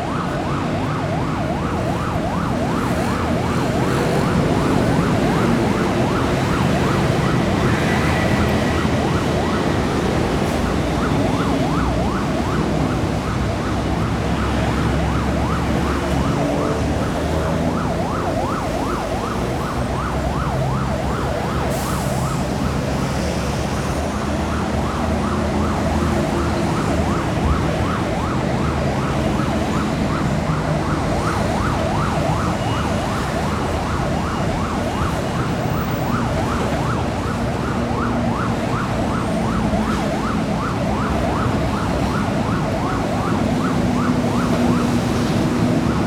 Sec., Zhongyang Rd., Tucheng Dist., New Taipei City - Traffic Sound
Traffic Sound
Zoom H4n +Rode NT4